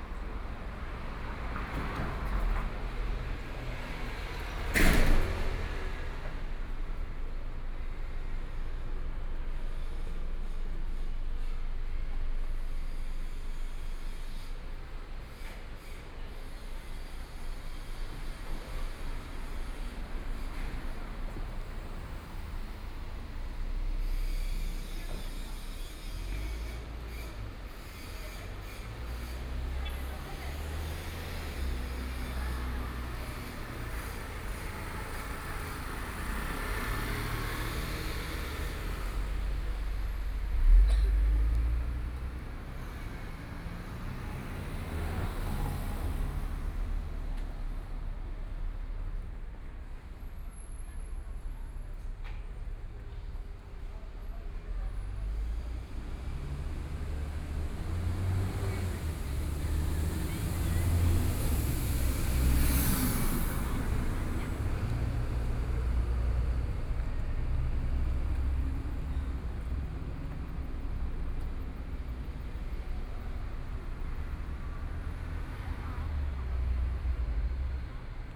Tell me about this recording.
walking on the Road, Traffic Sound, Binaural recordings